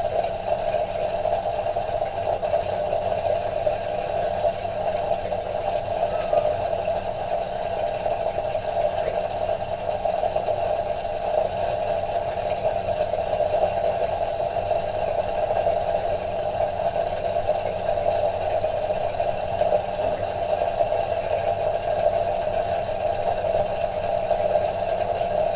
Nowieczek, Nowieczek, Polska - well pump
water flowing through a pump in a well. (roland r-07)